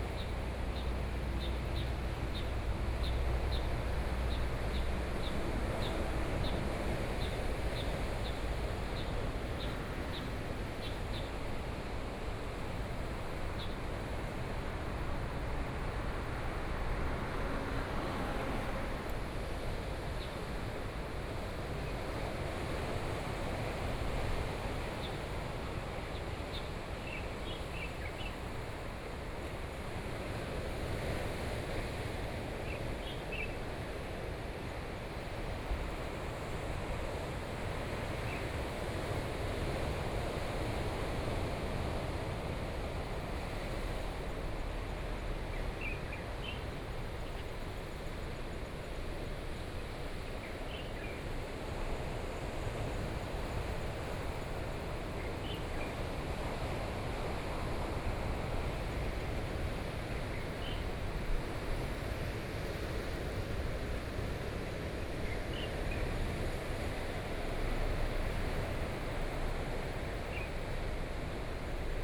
石城服務區, 宜蘭縣頭城鎮 - On the coast
On the coast, Sound of the waves, Birdsong, Traffic Sound, Very hot weather
Sony PCM D50+ Soundman OKM II